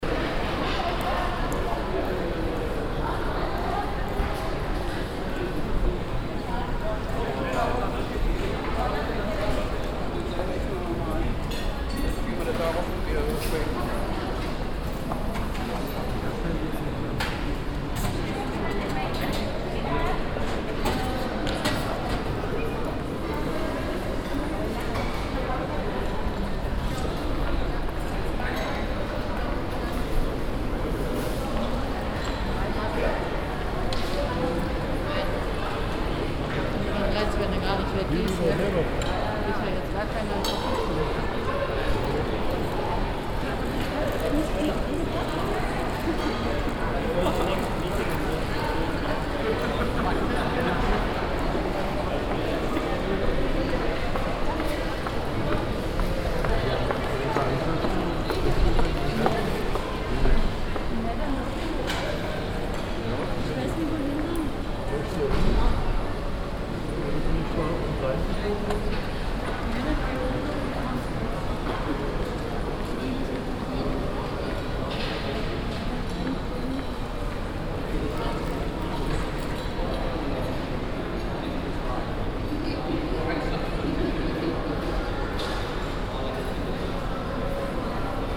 essen, rathaus gallery, west
inside the rathaus gallery on the west side of the building. A shopping zone in this area more focused on fast food restaurants.
In der Rathaus Galerie auf der West Seite des Gebäudes. Eine Einkaufszone die auf dieser Seite mehre Fast Food Restaurants konzentriert.
Projekt - Stadtklang//: Hörorte - topographic field recordings and social ambiences
Essen, Germany, 8 June 2011, ~23:00